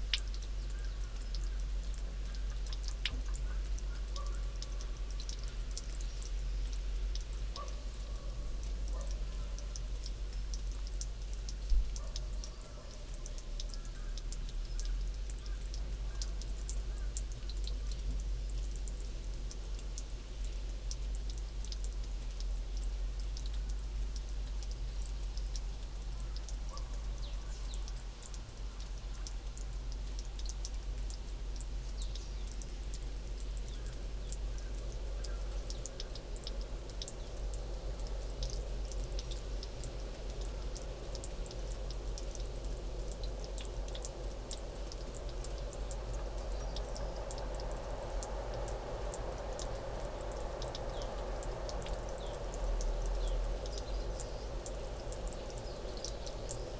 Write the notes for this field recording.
...sounds from throughout this long narrow valley reach the mics placed in a concrete box irrigation channel...a coughing dog, rooster and wind through nearby fur trees...spacially interesting...